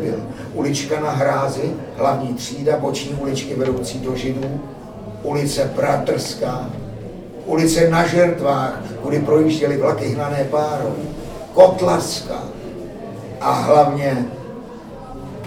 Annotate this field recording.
Ladislav Mrkvička čte ve Výčepu vína U Hrabala úryvek z knihy V rajské zahradě trpkých plodů o Hrabalovi a jeho životě v Libni od Moniky Zgustové . Noc literatury změnila na jeden večer pusté ulice kolem Palmovky v živou čvrť plnou lidí kvačících z jednoho místa čtení na druhé.